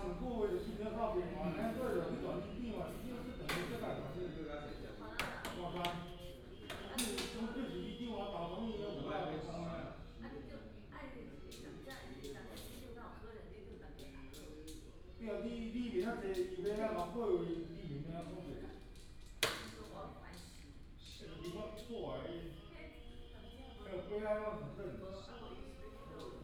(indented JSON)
{
  "title": "彰化聖王廟, Changhua City - play chess",
  "date": "2017-03-18 15:27:00",
  "description": "Inside the temple, Bird call, The old man is playing chess",
  "latitude": "24.08",
  "longitude": "120.54",
  "altitude": "22",
  "timezone": "Asia/Taipei"
}